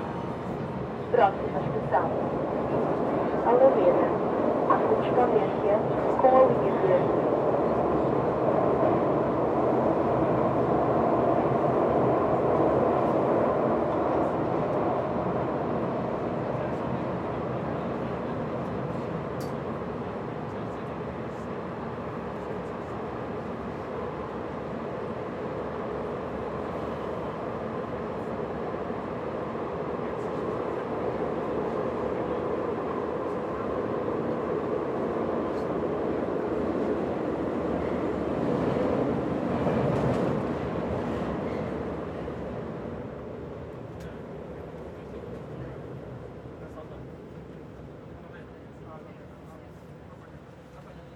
São Sebastião, Lisboa, Portugal - The Red Line (Lisbon Metro)
The Red Line (Lisbon Metro), from Airport to Sao Sebastião.
11 April 2022